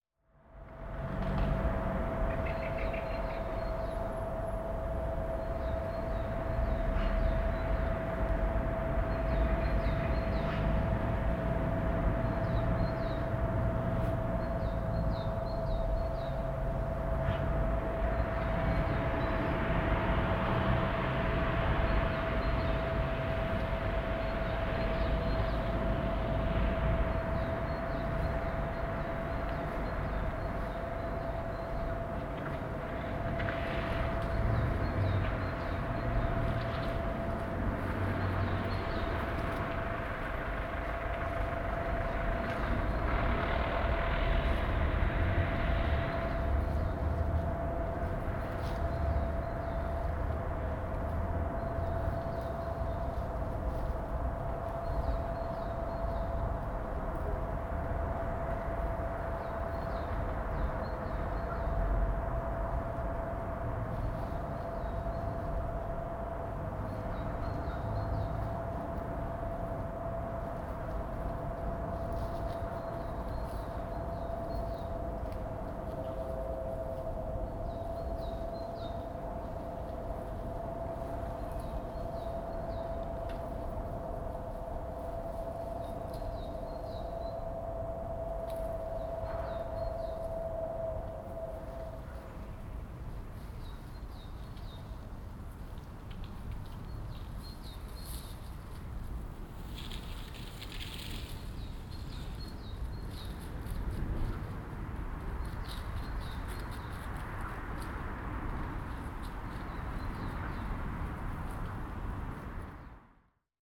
Thákurova, Praha, Czechia - (92 BI) Atmopshere
Binaural atmosphere recording taken on Tharukova street.
Recorded with Soundman OKM + Zoom H2n
Praha, Česko, 28 January 2017, 10:45